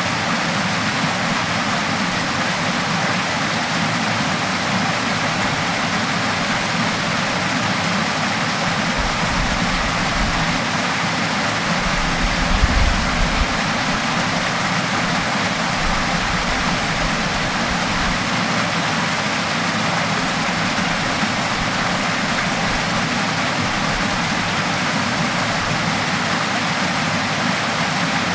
Dovestone Reservoir, Oldham, UK - Flowing water
Zoom H1 - Water flowing down a stone chute into the main reservoir
North West England, England, United Kingdom, 11 June